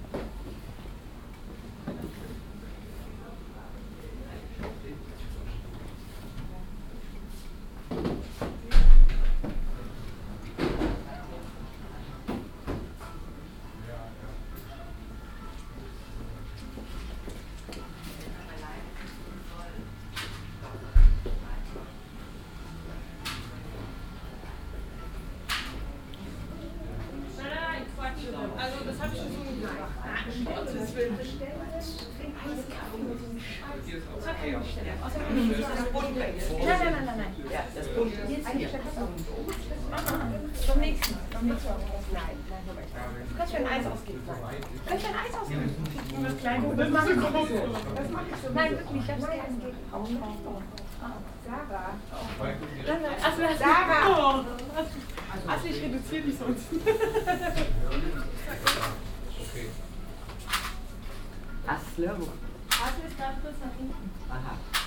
cologne, apostelnstrasse, bekleidungsgeschäft
soundmap: köln/ nrw
atmo in einem bekleidungsgeschäft, mittags
kundengespräche, kleiderbügel, hintergrundsmusik
project: social ambiences/ listen to the people - in & outdoor nearfield recordings